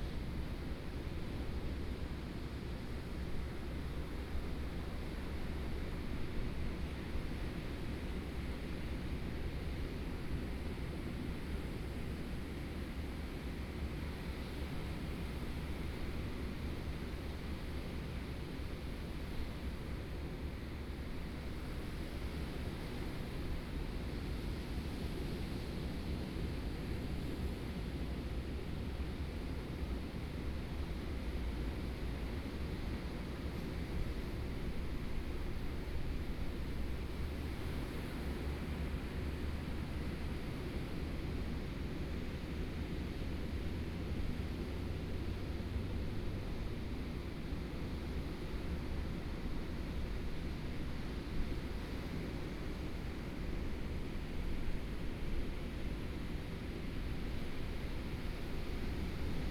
Wai'ao, Toucheng Township - Sound of the waves
Sound of the waves, Binaural recordings, Zoom H4n+ Soundman OKM II
Yilan County, Taiwan, 8 November